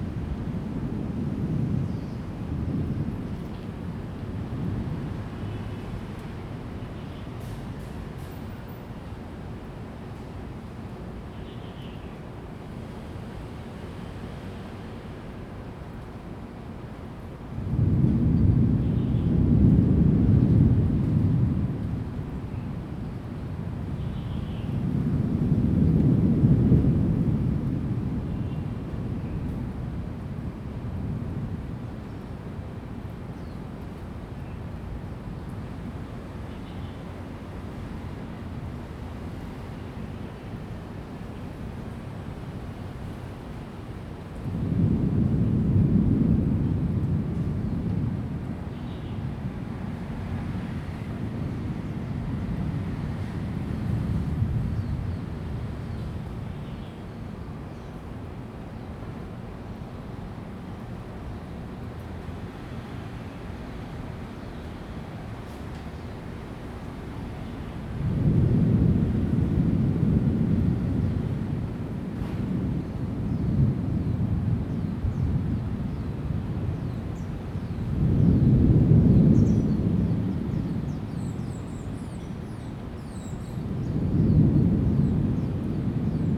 中興公園, 信義區 Taipei City - Thunder sound
in the Park, Thunder sound
Zoom H2n MS+XY